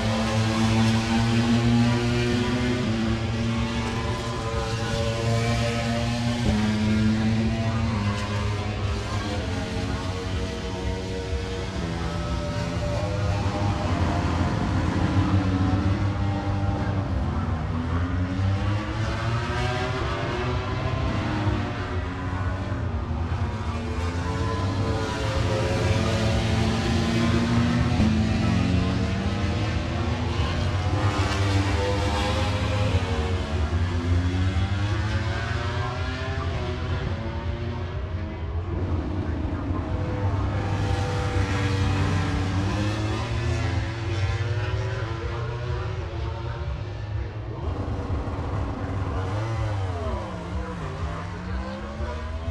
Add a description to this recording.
british motorcycle grand prix 2019 ... moto grand prix fp1 contd ... some commentary ... lavalier mics clipped to bag ... background noise ... the disco goes on ...